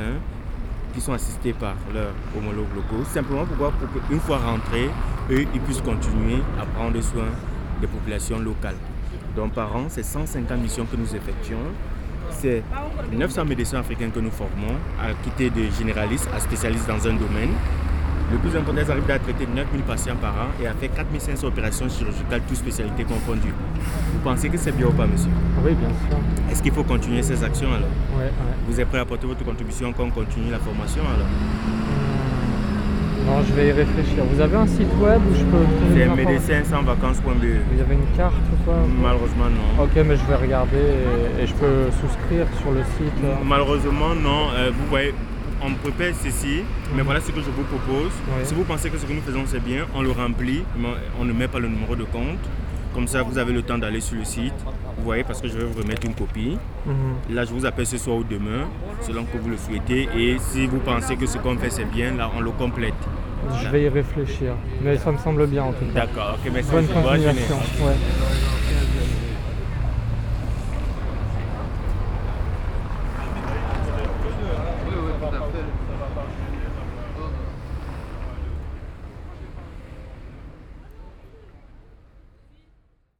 Brussels, Rue Haute, people gathering money for Medecins sans vacances
Bruxelles, rue Haute, récolte de fonds pour Médecins sans vacances.